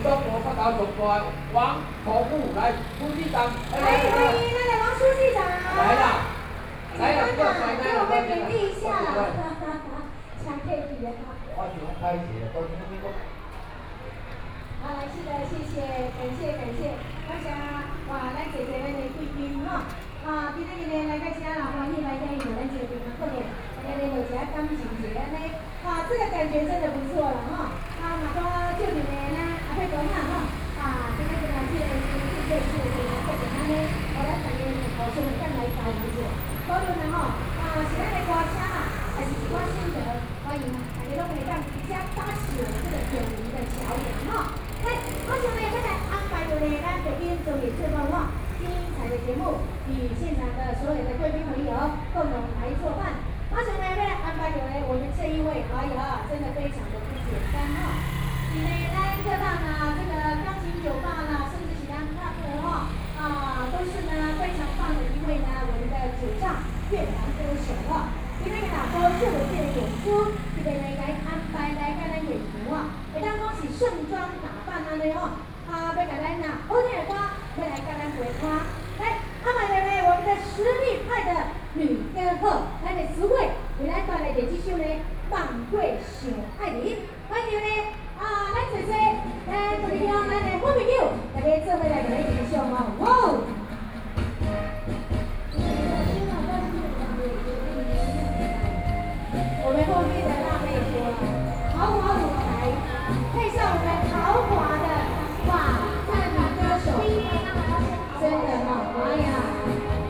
Yuren Rd., Beitou Dist. - Community party
Community party, Intersection, Traffic Noise, Sony PCM D50 + Soundman OKM II
Beitou District, Taipei City, Taiwan, 30 September